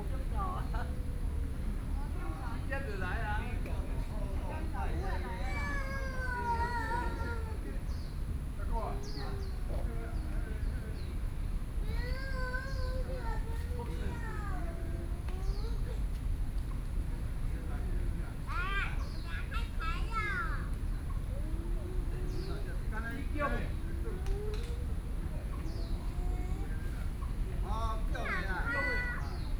Taipei Botanical Garden, Taipei City - in the Park
in the Park, Children and the elderly, birds song, Sony PCM D50 + Soundman OKM II
Taipei City, Taiwan, 13 September 2013, ~17:00